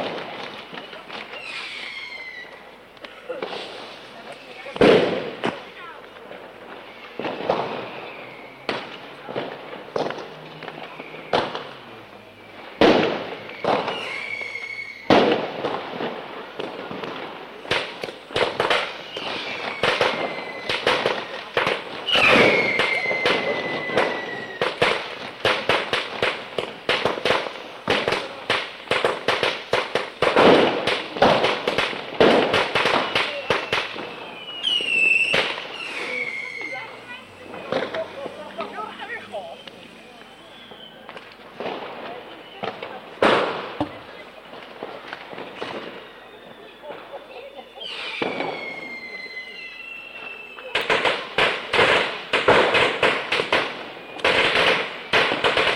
Haaksbergen, Nederland - New Years Eve 1988-1989
I was going through a box of old cassettes when I found this low fidelity recording I made on new years eve 1988 from my bedroom window when still living at my parents place.
I don't know the recording specs anymore. It was a consumer cassette player with two completely different mics.
1 January 1989, 00:05